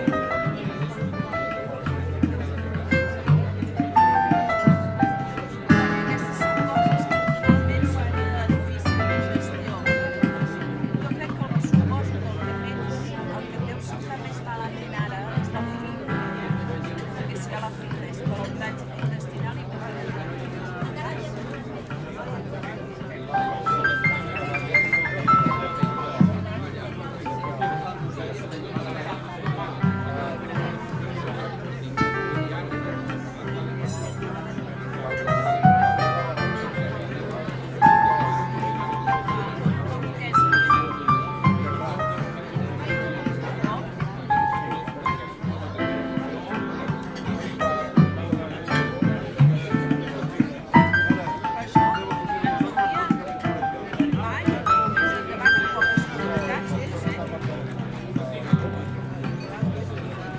C/ Major, Bellcaire d'Empordà, Girona, Espagne - Belcaire d'Emporda - Espagne - Restaurant L'Horta
Belcaire d'Emporda - Espagne
Restaurant L'Horta
Ambiance du soir avec des "vrais" musiciens
Prise de sons : JF CAVRO - ZOOM H6
Girona, Catalunya, España